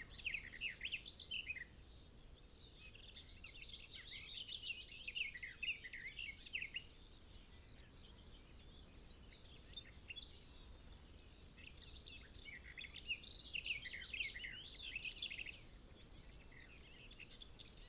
Willow warbler ... garden warbler ... soundscape ... bird song and calls ... yellowhammer ... skylark ... pheasant ... corn bunting ... binaural dummy head ... sunny ... very breezy early morning ...
Malton, UK, May 2011